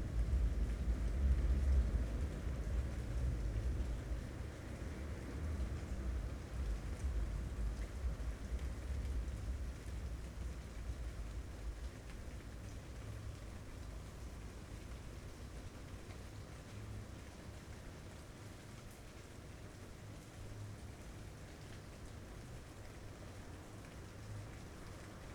Maribor, Slovenija - Tomšičev drevored at night
A storm is on its way away from the city, still greeting with thunder and rain. Some night riders disturb the late night recording.